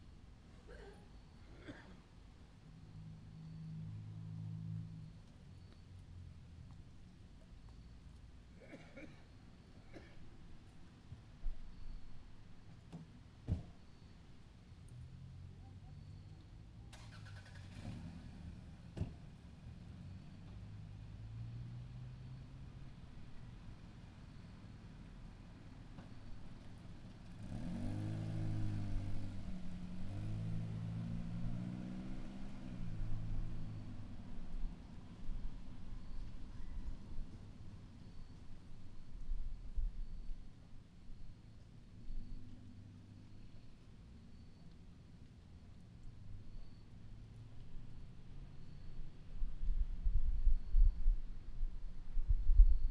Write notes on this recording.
Rainy evening in Trenton, cars driving by